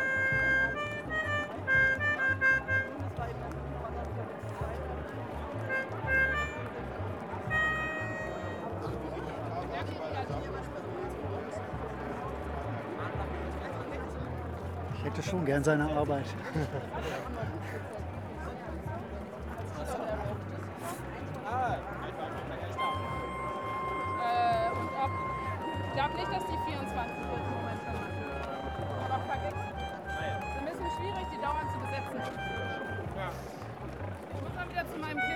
road to Morschenich, Kerpen Buir, Deutschland - demonstration sounds
sound of a demonstration against deforestation of nearby Hambacher Forst. Helicopters, people walking, a musician is playing his melodica to the beats of a distant sound system
(Sony PCM D50)
Germany